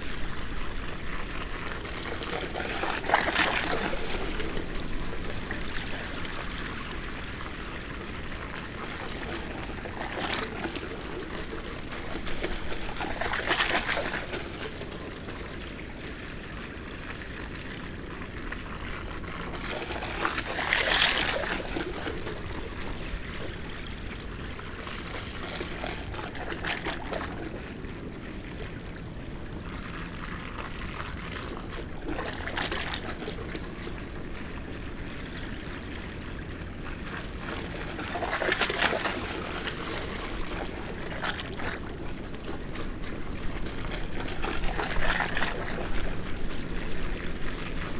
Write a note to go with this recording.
Amble Pier ... pattering waves ... a structure under the pier separates a lagoon from the main stream of water ... incoming waves produce this skipping effect by lapping the metal stancheons ... two fishing boats disrupt the pattern ... then it returns ... recorded using a parabolic reflector ... just fascinated by this ...